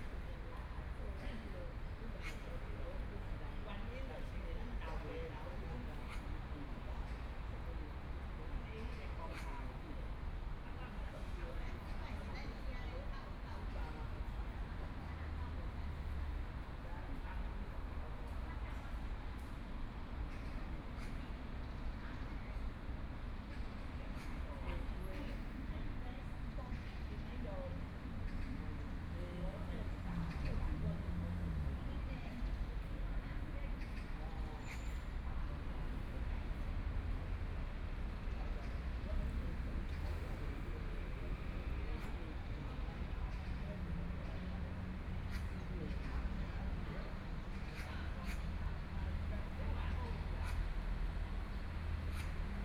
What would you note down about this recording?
Environmental sounds, Traffic Sound, Birds, Voice chat between elderly